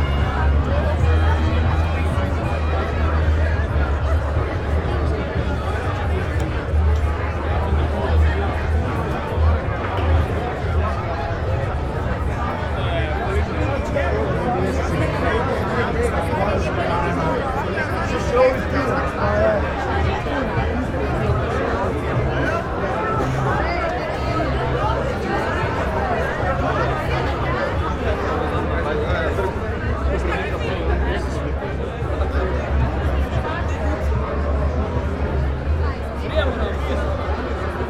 Poštna ulica, Maribor - night street
Maribor, Slovenia, 27 June